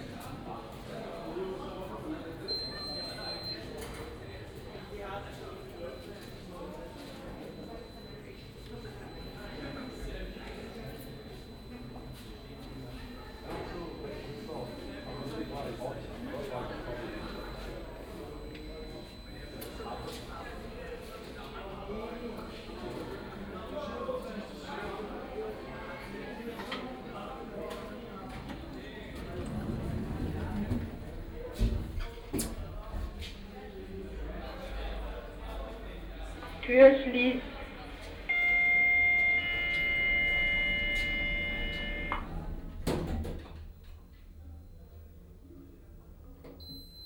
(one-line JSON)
{"title": "Kottbusser Tor - Subway", "date": "2009-12-12 00:10:00", "description": "weekend, kottbusser tor U1/U8 subway station, arrival at 1st floor platform, stairway malfunction, move downwards to subway level, no train departure within 20min, leaving station by elevator.", "latitude": "52.50", "longitude": "13.42", "altitude": "37", "timezone": "Europe/Berlin"}